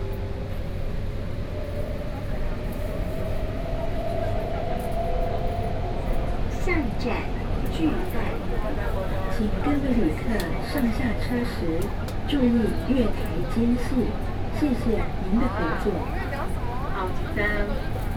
Zuoying, Kaohsiung - inside the MRT train
inside the MRT train, Sony PCM D50 + Soundman OKM II